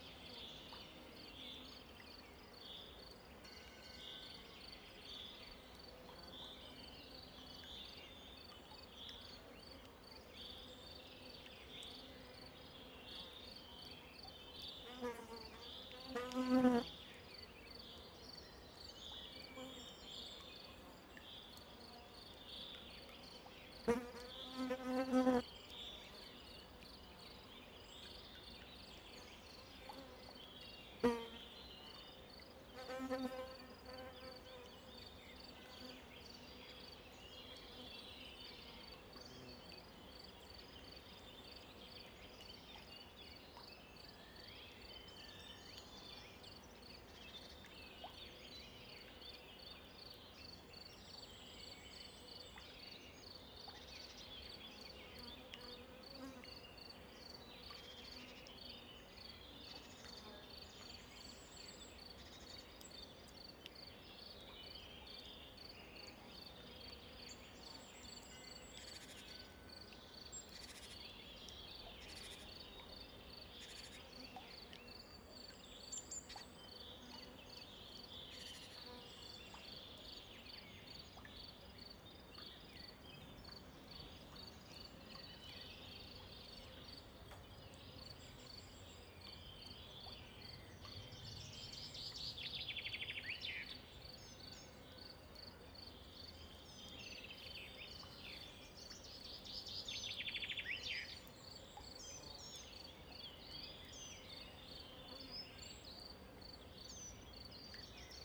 Buchenberg, Deutschland - Morgenstimmung, Waldlichtung
Gesumme, Vögel, tropfender Brunnen, Linienflugzeug, I.H. Gebimmel von Kuhglocken.